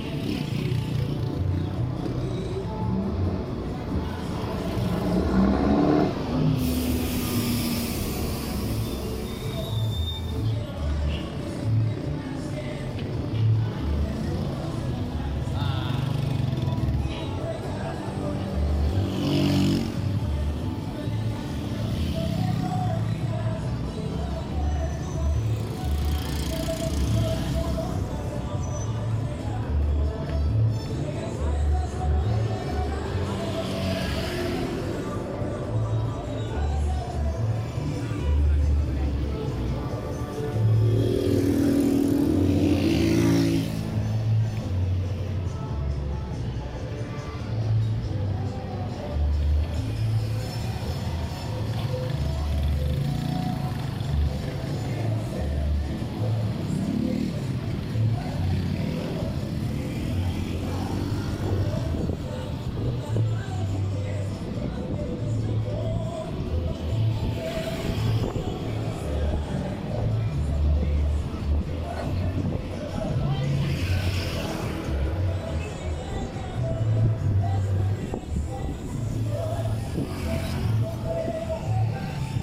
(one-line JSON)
{"title": "Cl., Medellín, Antioquia, Colombia - Salida de un Bar", "date": "2021-11-08 20:54:00", "description": "Información Geoespacial\n(latitud: 6.256802, longitud: -75.615816)\nBar\nDescripción\nSonido Tónico: Gente hablando, música, carros pasando\nSeñal Sonora: Bocinas de carros\nMicrófono dinámico (celular)\nAltura: 1, 75 cm\nDuración: 3:00\nLuis Miguel Henao\nDaniel Zuluaga", "latitude": "6.26", "longitude": "-75.62", "altitude": "1542", "timezone": "America/Bogota"}